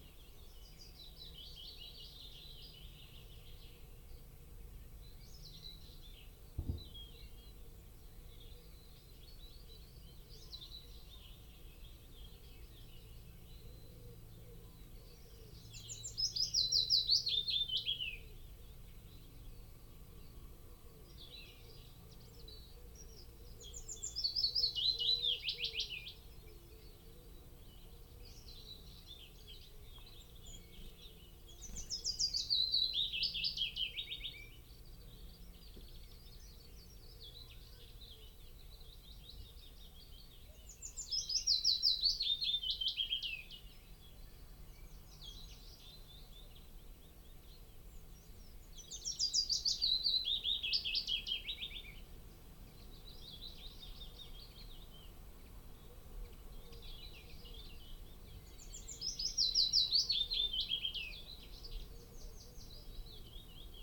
Luttons, UK - Willow warbler song soundscape ...
Willow warbler song soundscape ... binaural dummy head on tripod to minidisk ... bird calls and song from ... coal tit ... great tit ... blue tit ... whitehroat ... pheasant ... wood pigeon ... lapwing ... blackbird ... wren ... chaffinch ... blackcap ... some background noise ...
16 May 2010, 06:00